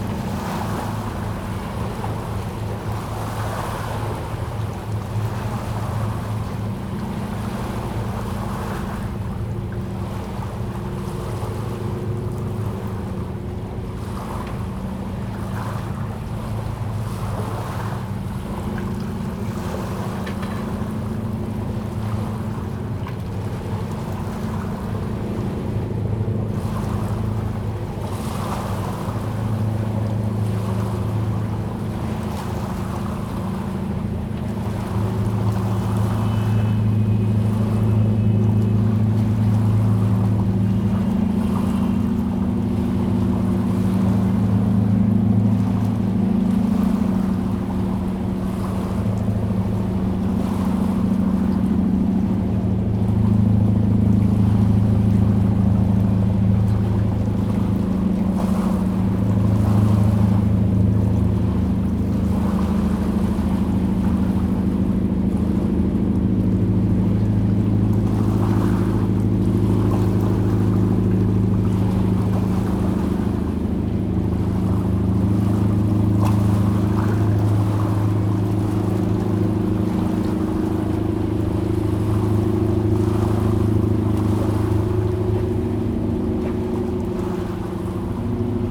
On the banks of the river, The sound of river, Passenger ships
Zoom H2n MS+XY
Tamsui District, New Taipei City, Taiwan, January 2017